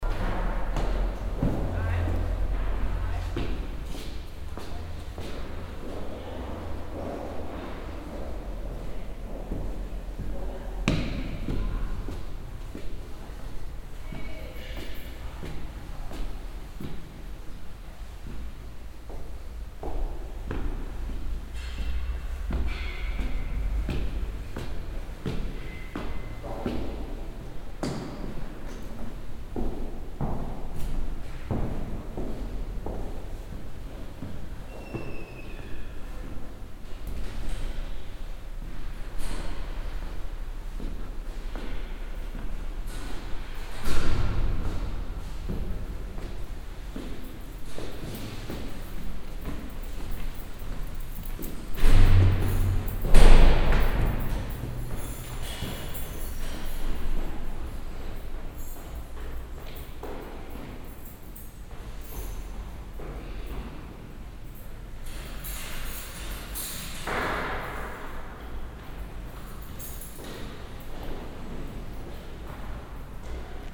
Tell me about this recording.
Grosses Treppenhaus in hohem Industriehinterhofgebäude, diverse Schritte, soundmap nrw: social ambiences, topographic field recordings